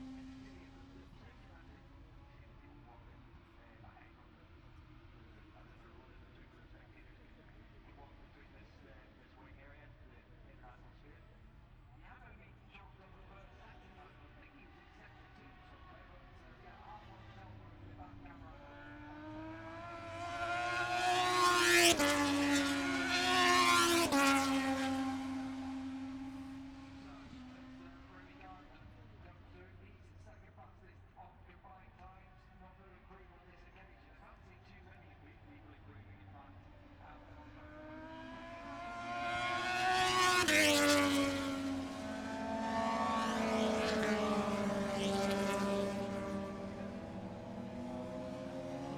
Silverstone Circuit, Towcester, UK - british motorcycle grand prix 2021 ... moto two ...
moto two free practice three ... copse corner ... dpa 4060s to Zoom H5 ...